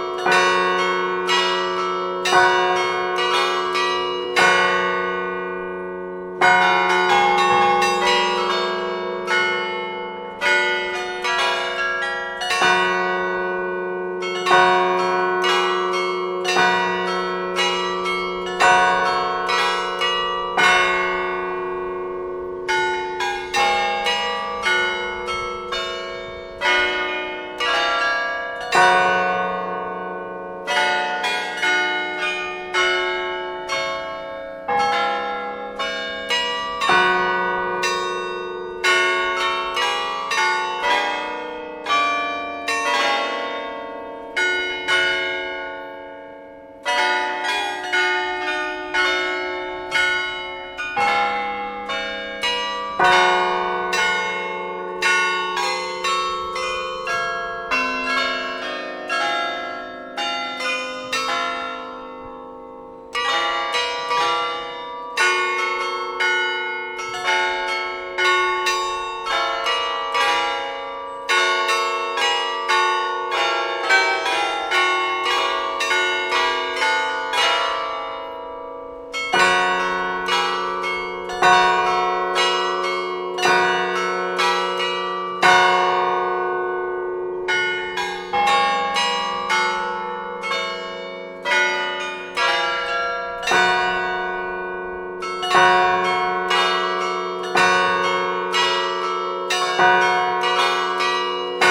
{
  "title": "Bd Joseph Hentgès, Seclin, France - Carillon de la collégiale église St Piat - Seclin",
  "date": "2020-06-27 16:00:00",
  "description": "Carillon de la collégiale église St Piat - Seclin (Nord)\nRitournelles automatisées",
  "latitude": "50.55",
  "longitude": "3.03",
  "altitude": "33",
  "timezone": "Europe/Paris"
}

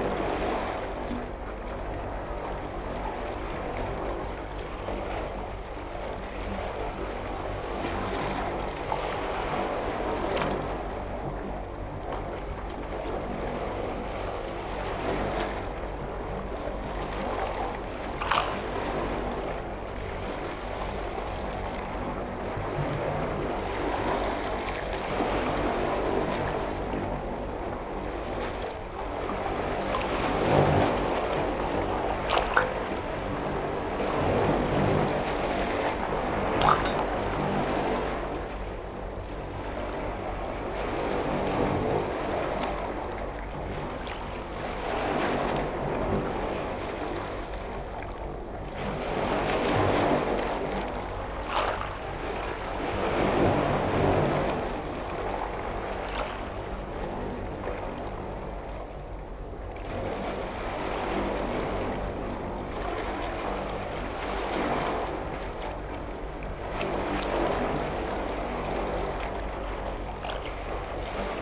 {
  "title": "Viale Miramare, Trieste, Italy - sea waves roar",
  "date": "2013-09-07 18:57:00",
  "description": "sea waves heard from metal tube of a traffic sign",
  "latitude": "45.67",
  "longitude": "13.76",
  "timezone": "Europe/Rome"
}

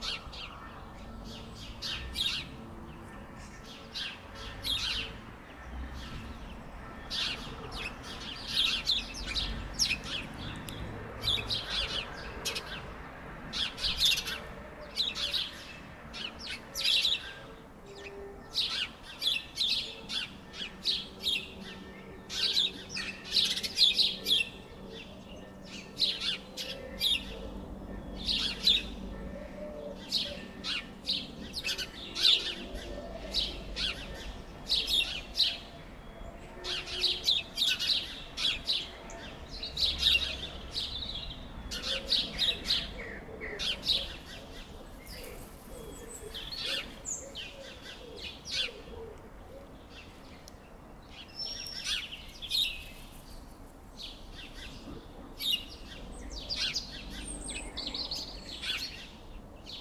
{
  "title": "Mont-Saint-Guibert, Belgique - Noisy sparrows",
  "date": "2016-03-15 06:59:00",
  "description": "On the morning, noisy sparrows are playing on a tree, a train is passing and very far, the sound of the bells ringing angelus.",
  "latitude": "50.64",
  "longitude": "4.61",
  "altitude": "110",
  "timezone": "Europe/Brussels"
}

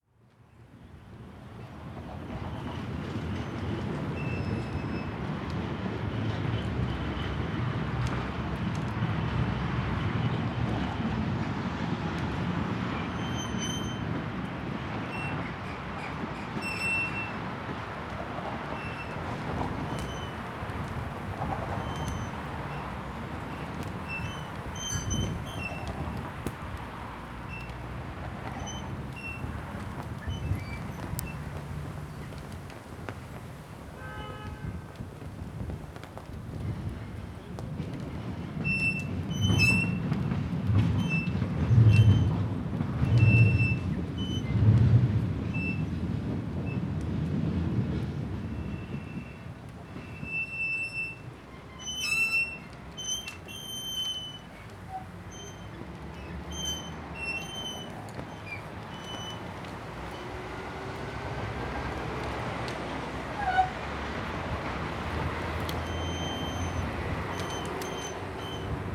{
  "title": "Poznan, Kornicka street, at Opel dealership - 3 flagpoles",
  "date": "2014-05-02 07:37:00",
  "description": "three masts tensing up and bending in brisk wind.",
  "latitude": "52.40",
  "longitude": "16.95",
  "altitude": "65",
  "timezone": "Europe/Warsaw"
}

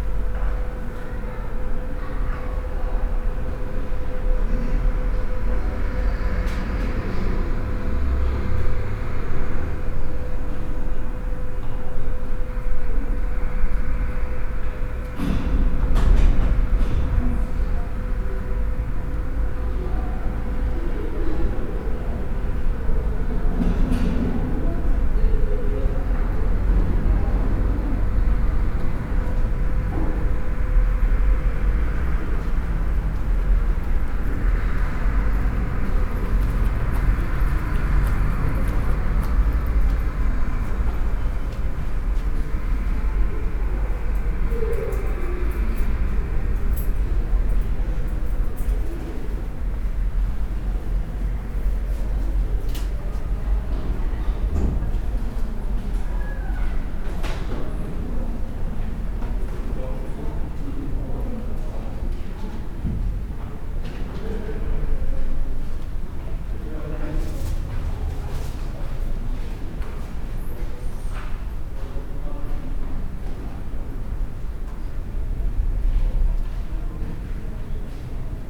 {
  "title": "city library, Kleistforum, Hamm, Germany - library hum Friday eve",
  "date": "2015-06-12 17:47:00",
  "description": "hum of the building with its open staircase; steps and voices over 3 floors; bus station roaring outside;\nthe recordings were made in the context of the podcast project with Yes Afrika Women Forum",
  "latitude": "51.68",
  "longitude": "7.81",
  "altitude": "66",
  "timezone": "Europe/Berlin"
}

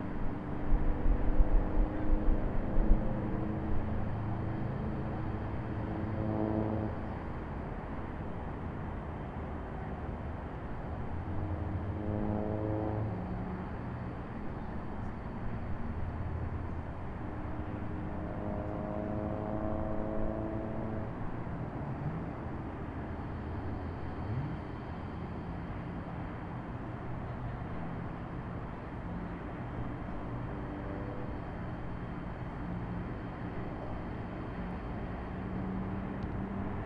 {
  "title": "N Cascade Ave, Colorado Springs, CO, USA - West Campus Apartments Preserve Hill",
  "date": "2018-04-26 21:41:00",
  "description": "Recorded in the late evening on the west facing slope of preserve hill between Blanca and JLK apartment buildings. I [placed the Zoom H1 on the ground on a tripod about 4 inches from the grass. The mic was angeled slightly south-west towards the sports fields below. No dead cat used.",
  "latitude": "38.85",
  "longitude": "-104.83",
  "altitude": "1841",
  "timezone": "America/Denver"
}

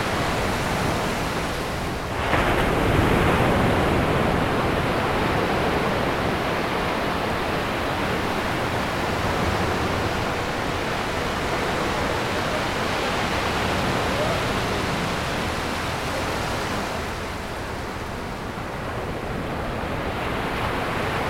{
  "title": "Del Playa Dr, Goleta, CA, USA - Ocean",
  "date": "2019-10-23 18:09:00",
  "description": "This sound recording was taken before sunset.",
  "latitude": "34.41",
  "longitude": "-119.86",
  "altitude": "9",
  "timezone": "America/Los_Angeles"
}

